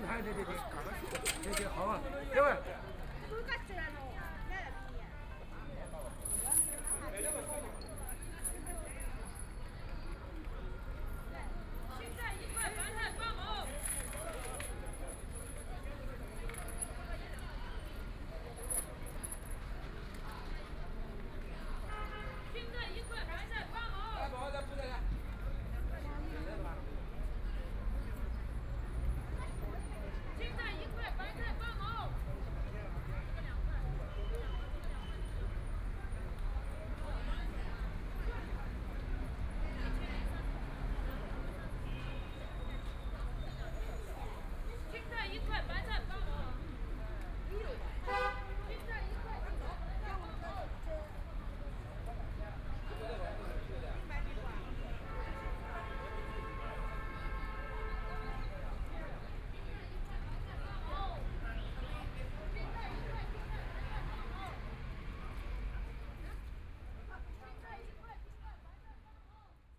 Yangpu Park, Shanghai - Markets
Bazaar at the park entrance plaza, Cries sell vegetables, Traffic Sound, Binaural recording, Zoom H6+ Soundman OKM II
26 November, ~11:00